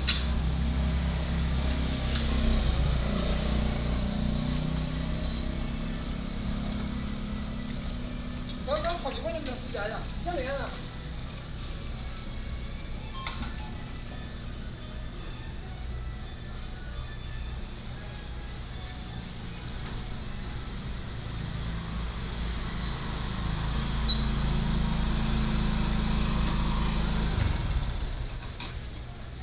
Minsheng Rd., Liuqiu Township - In the street
In the street, In front of the convenience store
Pingtung County, Taiwan, 1 November 2014